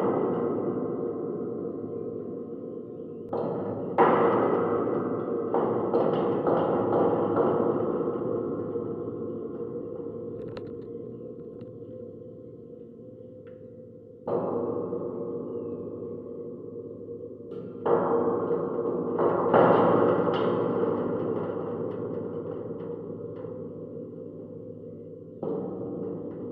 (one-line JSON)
{"title": "Court-St.-Étienne, Belgique - Metallic fence", "date": "2016-04-07 12:50:00", "description": "A metallic fence was recently added near all the train platform. I hit the metal with a finger. Audiotalaia contact microphones.", "latitude": "50.64", "longitude": "4.57", "altitude": "64", "timezone": "Europe/Brussels"}